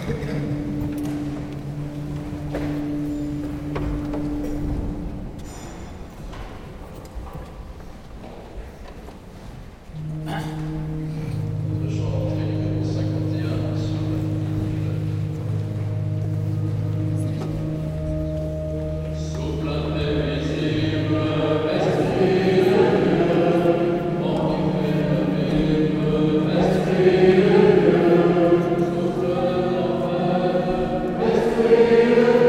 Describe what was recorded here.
Recording of the beginning of the traditional mass in the St-Martin de Ré church. Good luck to everyone who want to listen to this !